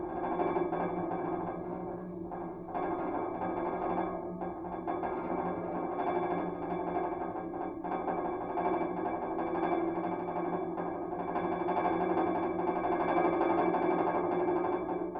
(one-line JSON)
{"title": "Autobahn bridge, Köln, Deutschland - railing vibrations", "date": "2017-08-16 16:05:00", "description": "Rodenkirchner Autobahnbrücke / highway bridge, vibration in railing\n(Sony PCM D50, DIY contact mics)", "latitude": "50.90", "longitude": "6.99", "altitude": "53", "timezone": "Europe/Berlin"}